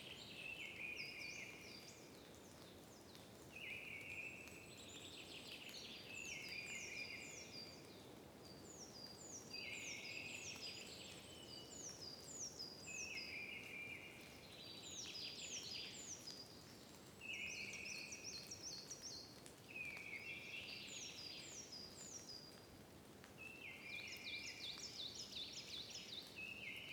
Cueillette et ballade en Lozère par temps de vent et de pluie!
les herbes sèches tintent, les branches grincent, les fils sifflent et les portails chantent.
6 April 2021, 16:07